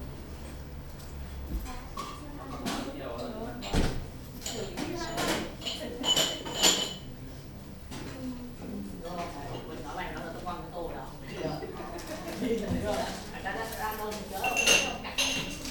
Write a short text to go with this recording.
05.02.2009, 12:30 mittagessenszeit im hamy restaurant / lunch time, hamy restaurant